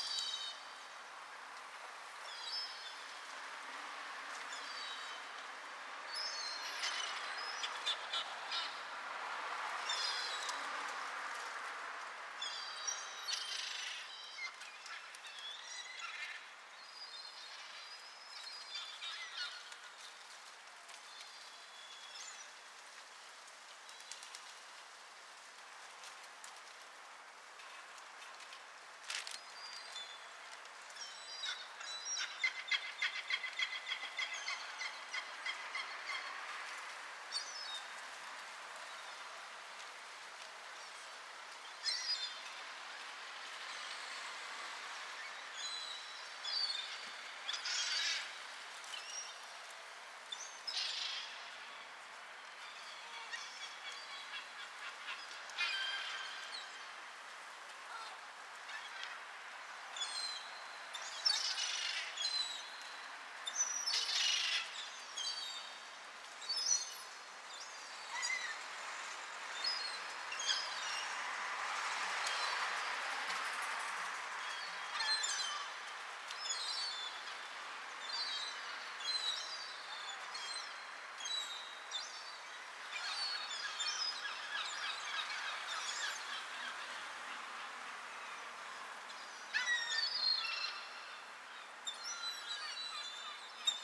Seaguls in urban enviroment. Recorded with AB omni primo 172 capsules and a SD mixpre6.